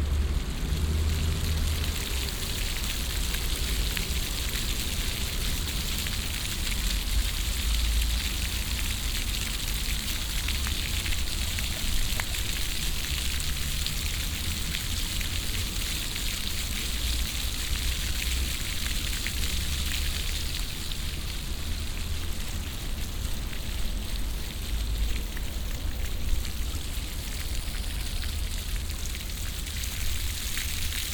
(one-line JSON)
{"title": "dresden, hauptstraße, modern floor fountain", "date": "2009-06-16 14:42:00", "description": "modern floor fountain, with intervall synchronisted water pumps. single fountains in a sqaure move slowly up and down\nsoundmap d: social ambiences/ listen to the people - in & outdoor nearfield recordings", "latitude": "51.06", "longitude": "13.74", "altitude": "117", "timezone": "Europe/Berlin"}